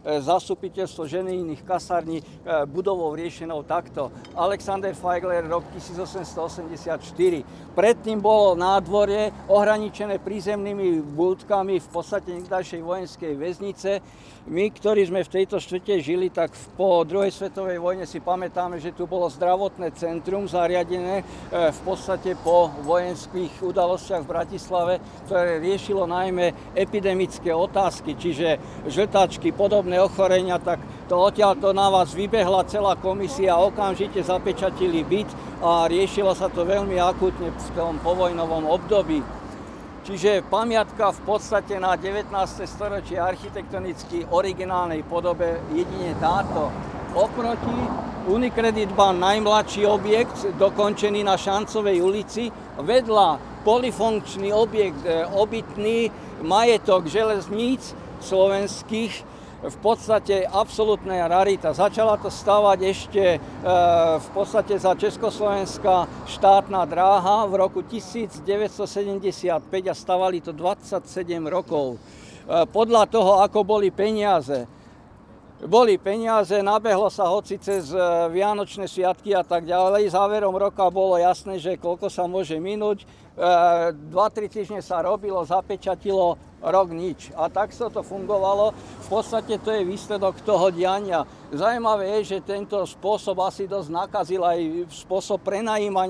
Unedited recording of a talk about local neighbourhood.
13 June 2014, 19:58